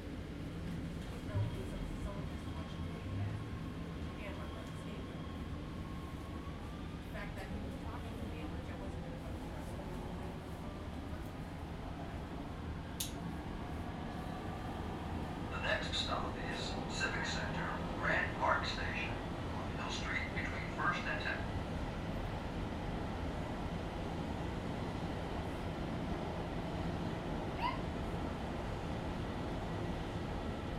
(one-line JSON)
{"title": "Civic Center / Little Tokyo, Los Angeles, Kalifornien, USA - LA - underground train ride", "date": "2014-01-24 15:00:00", "description": "LA - underground train ride, red line, arriving at union station, few passengers, announcements;", "latitude": "34.05", "longitude": "-118.25", "timezone": "America/Los_Angeles"}